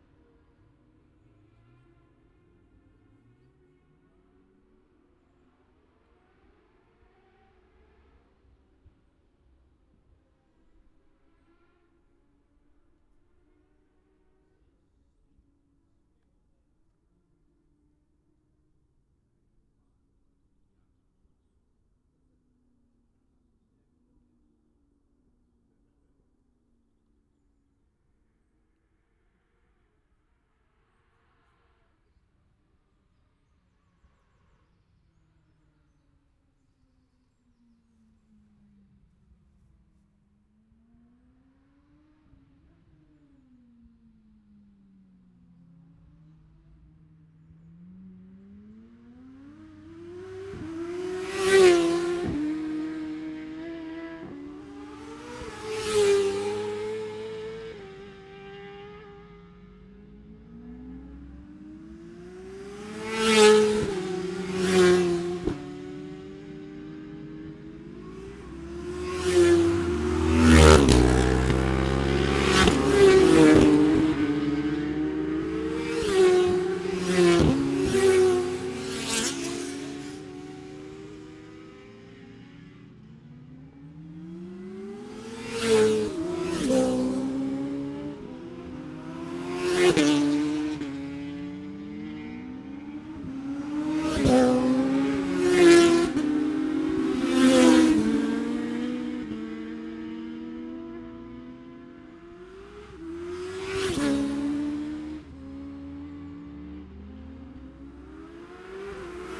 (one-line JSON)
{"title": "Scarborough, UK - motorcycle road racing 2017 ... 1000cc ...", "date": "2017-04-22 10:15:00", "description": "1000cc practice ... even numbers ... Bob Smith Spring Cup ... Olivers Mount ... Scarborough ... open lavalier mics clipped to sandwich box ...", "latitude": "54.27", "longitude": "-0.41", "altitude": "147", "timezone": "Europe/London"}